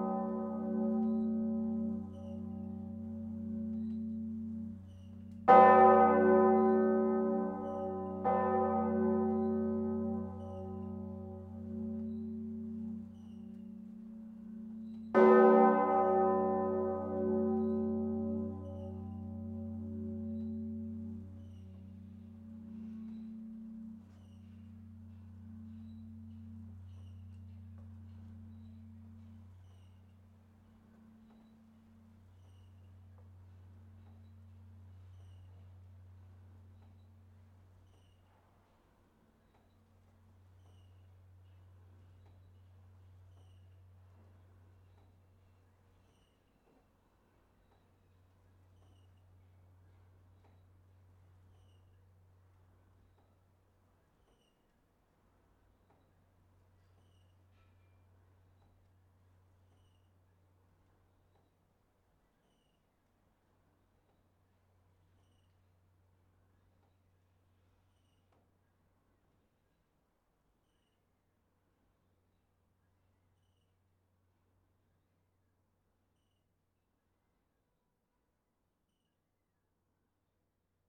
Armentières (Nord)
tutti volée des cloches de l'église St-Vaast
France métropolitaine, France, 1 July 2020, 10:00